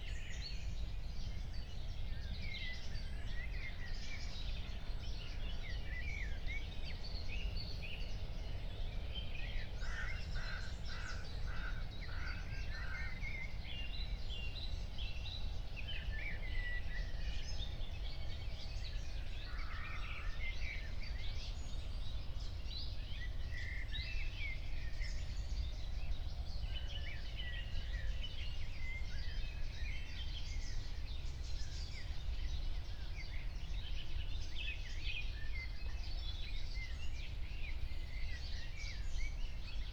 04:00 Berlin, Wuhletal - Wuhleteich, wetland